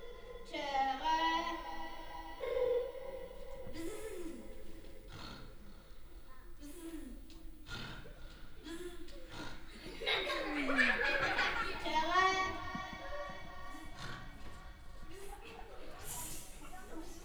Düsseldorf, Tonhalle, sound performance for kids - düsseldorf, tonhalle, sound performance for kids
asecond example of the same performance for kids - here: he human sound piano
soundmap d - social ambiences and topographic field recordings
Düsseldorf, Germany, 10 February 2011, 15:47